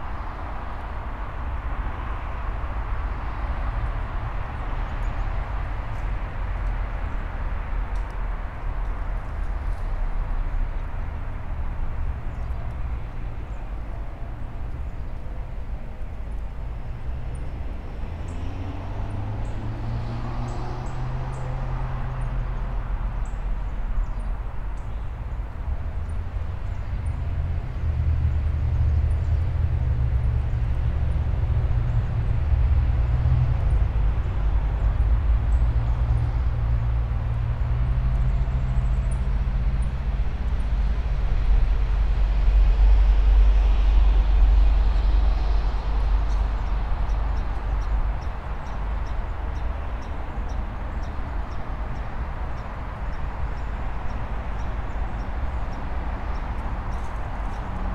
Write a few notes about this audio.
A picnic table in Pendergrast Park. The soundscape here is a mix of heavy traffic sounds and bird calls. Other sounds can be heard throughout, such as the wind rustling dead leaves on a tree behind the recorder to the right. The traffic here is more prominent than it is in the woods. [Tascam Dr-100 Mkiii & Primo EM-272 omni mics]